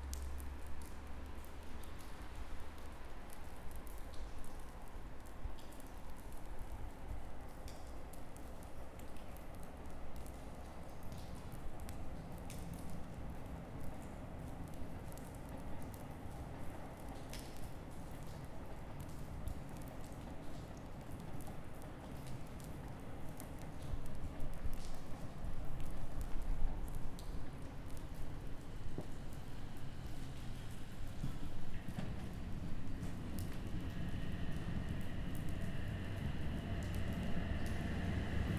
Poznan, Piatkowo district, Szymanowskiego tram stop, night trams
night trams ariving and departing, water drops dripping from the overpass above